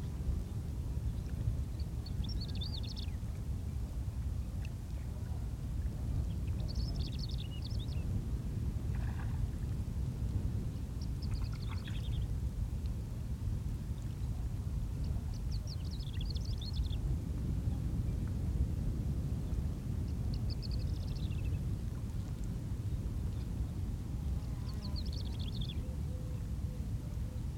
Praia do Barril, Portugal - Praia do Barril beach
Praia do Barril is a long beach island. This recording was made on the side facing land, there are no waves and as a result it's quieter than the other side. You can hear birds and small fish splashing in the water near the shore. As it is also close to Faro airport you can hear an airplane at the beginning and end of the recording.
Recorder - Zoom H4N. Microphones - pair of Uši Pro by LOM